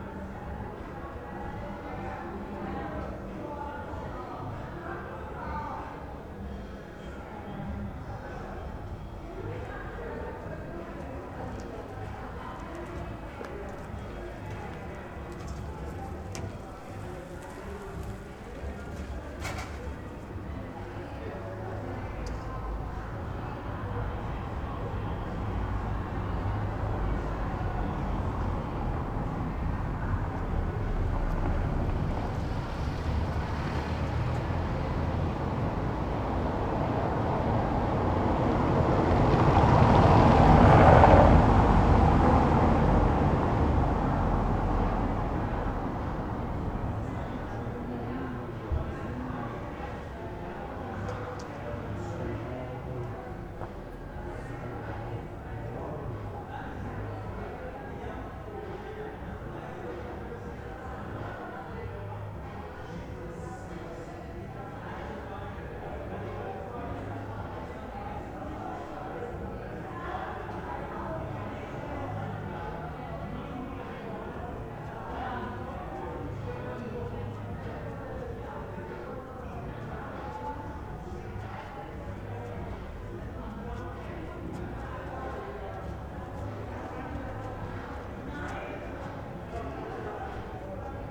berlin, friedelstraße: vor bar - the city, the country & me: in front of a bar
the city, the country & me: september 10, 2011